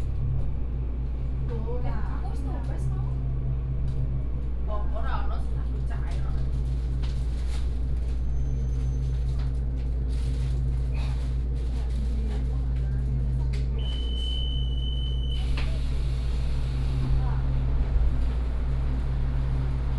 花壇鄉中庄村, Changhua County - In the compartment
In a railway carriage, from Huatan Station to Dacun Station
Huatan Township, Changhua County, Taiwan, 12 May 2016, 14:05